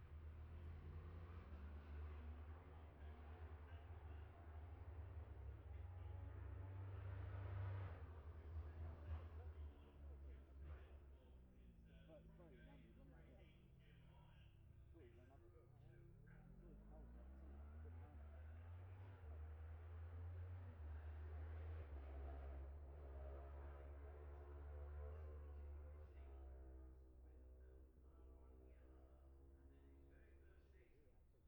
Jacksons Ln, Scarborough, UK - olivers mount road racing 2021 ...
bob smith spring cup ... twins group A qualifying ... luhd pm-01 mics to zoom h5 ...